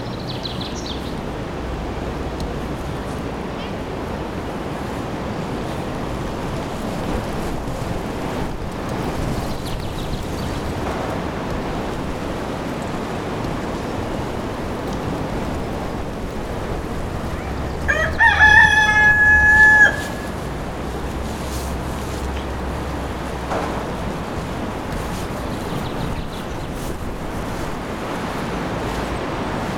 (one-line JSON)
{"title": "Les Aspres, France - Les Aspres, le coq", "date": "2014-02-13 16:40:00", "description": "Près d'une rivière on entend un coq qui chante, Zoom H6, micros Neumann", "latitude": "48.69", "longitude": "0.59", "altitude": "246", "timezone": "Europe/Paris"}